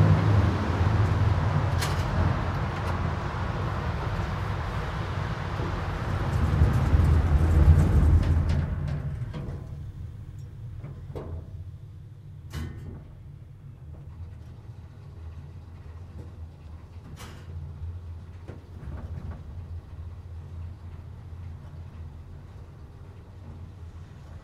Rathauspassage elevator to parking garage
getting into and taking the elevator up to the parkplatz top floor, Aporee workshop
Germany, 2 February 2010